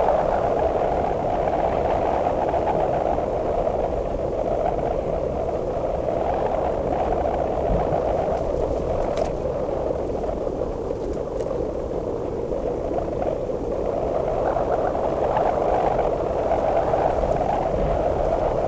Whipping wind harps Kaunas, Lithuania
Recorded during the 'Environmental Sound Installation' workshop in Kaunas
12 April 2012